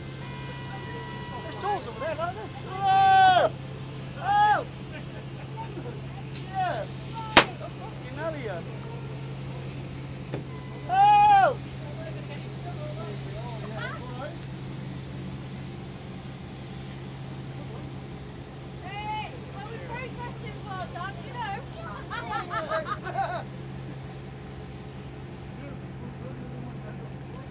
The Sound, Plymouth - Fishing for Leave
I met a fisherman called Dave who invited me out onto his boat to record a pro-Leave demonstration that he was going to be part of. A fleet of local fishing boats did a couple laps around the Sound before sitting by the waterfront for a couple of minutes to make some noise.